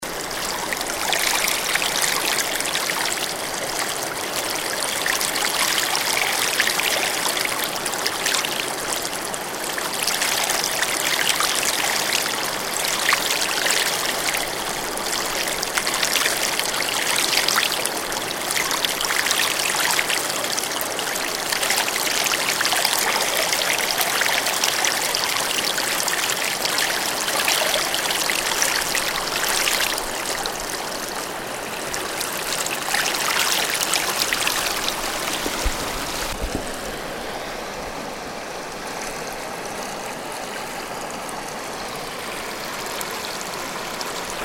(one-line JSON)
{"title": "sent at 13.07.2010 08:27", "date": "2006-04-16 13:15:00", "description": "Gurgle of Water at Seven Sisters Falls", "latitude": "50.11", "longitude": "-96.02", "altitude": "269", "timezone": "America/Winnipeg"}